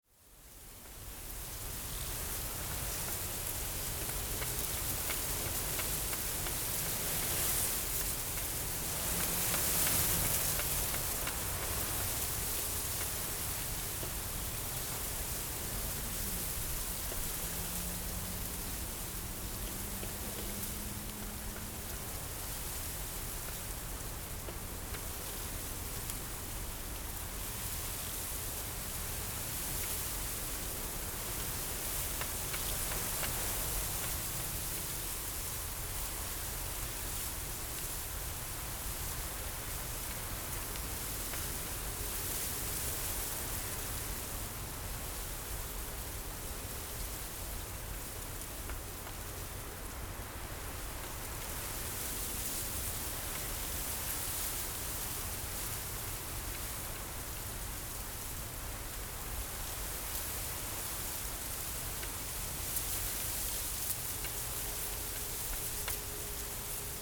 Fangyuan Township, Changhua County - The sound of the wind
The sound of the wind, Zoom H6
Changhua County, Taiwan, 4 January, 14:45